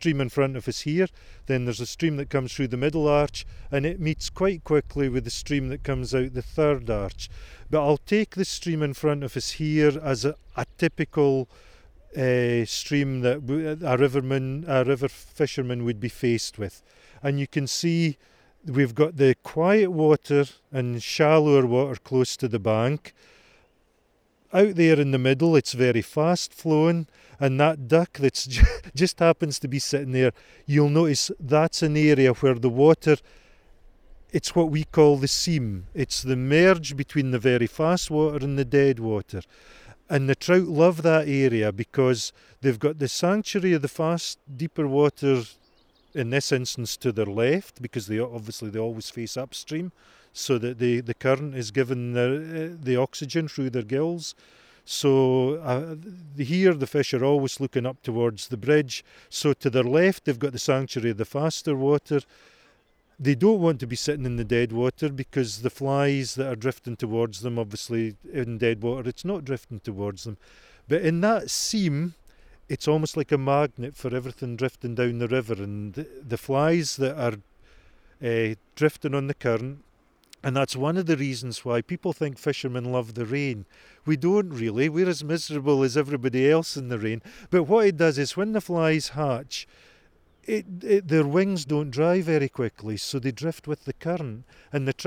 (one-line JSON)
{"title": "Kelso, Scottish Borders, UK - Tweed angler - Ronnie Glass", "date": "2013-04-25 19:46:00", "description": "Angler describes how to 'read' the River Tweed for fishing. Ronnie Glass from Kelso is a Scottish National Trout Fly Fishing Champion. We stood under Kelso Old Bridge with drizzle in the air, as he explained the river seam and why fish and fishermen like rain. AKG condensor mic (early experiment!) and Zoom H4N.", "latitude": "55.60", "longitude": "-2.43", "altitude": "34", "timezone": "Europe/London"}